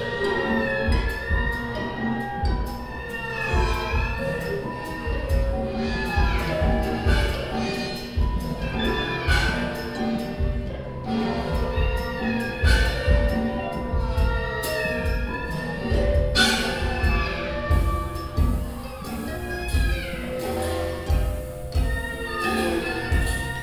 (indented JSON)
{
  "title": "cologne, alter wartesaal, trip clubbing concert",
  "date": "2010-05-09 12:30:00",
  "description": "inside the concert hall of the alte wartesaal - a concert of the zeitkratzer ensemble performing music by marcus popp/ oval within the concert series trip clubbing\nsoundmap nrw - social ambiences and topographic field recordings",
  "latitude": "50.94",
  "longitude": "6.96",
  "altitude": "55",
  "timezone": "Europe/Berlin"
}